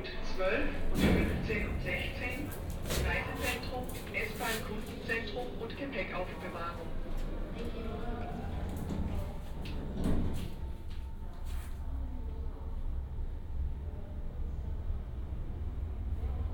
lehrter bahnhof (hbf): aufzugansage, untergeschoss, gleis - Hbf, Vertikale 2.UG - 2.OG
05.01.2009 19:30, elevator ride in berlin main station, 4 floors.
Berlin, Germany, 5 January 2009